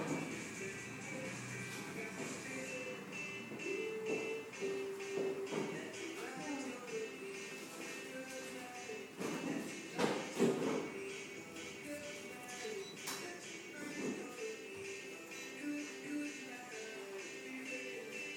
Pizzeria Santa Lucia, Sedanplatz 3, Wiesbaden
Wiesbaden, Germany